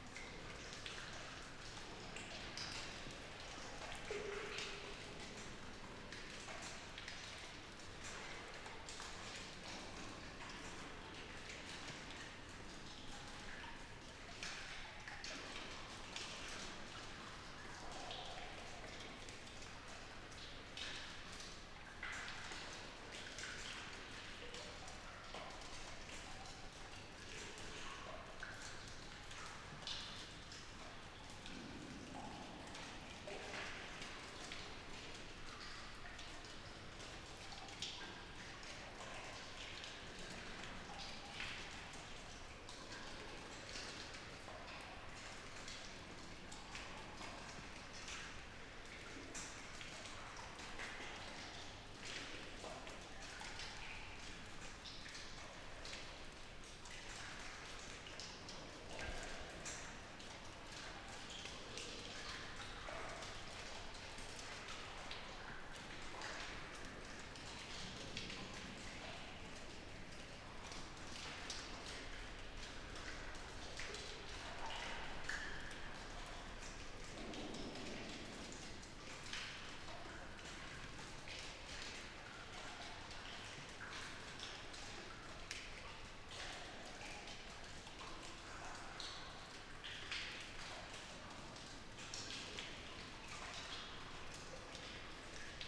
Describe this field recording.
Intérieur de galerie de ce La Coupole, gouttes d'eau et ruissellement dans ces galeries de craie calcaire, à l'acoustique tout à fait particulière. Ces galléries sont pour certaine pas entièrement "coffrées de béton" à la fin de la seconde guerre mondiale. Original recording, sd mix pré6II avec 2xDPA4021 dans Cinela Albert ORTF